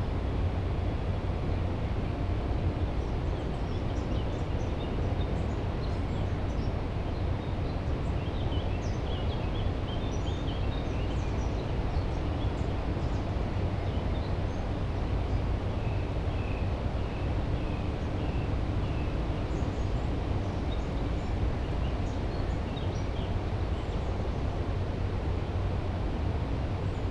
hum and noise from inside the the power plant and cooling towers